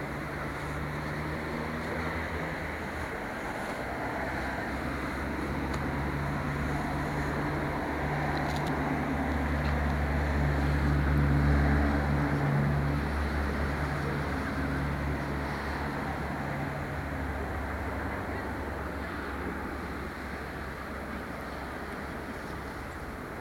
Sloneczne lake, Szczecin, Poland
Sloneczne lake in the night.